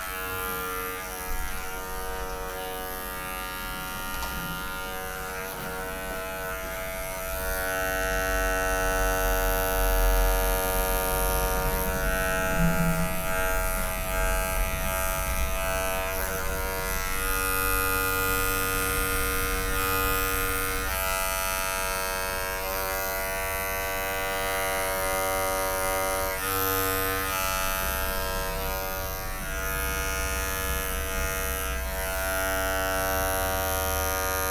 Rasimpaşa, Recaizade Sk., Kadıköy/İstanbul, Turkey - hairdressing
hairdressing.
2 x dpa 6060.